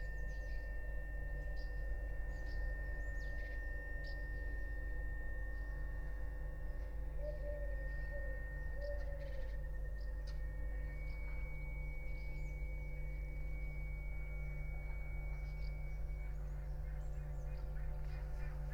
Pod Lipą, Borsuki, Poland - (835c AB) birds and approaching engine
Recording of birds, some approaching engine (not sure was it a car or maybe a plane), and an unknown machine pitch.
Recorded in AB stereo (17cm wide) with Sennheiser MKH8020 on Sound Devices MixPre6-II
województwo mazowieckie, Polska, 21 August 2021, ~06:00